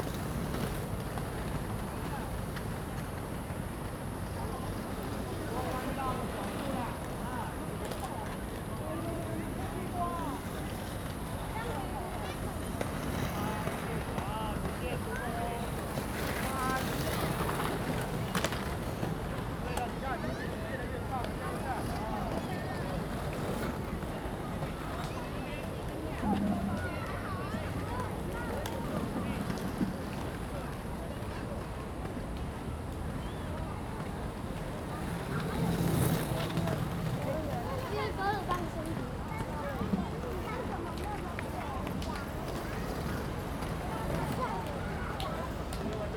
大安森林公園, 大安區, Taipei City - Skates field
Skates field, Many children are learning skates
Zoom H2n MS+XY
Taipei City, Taiwan, 25 July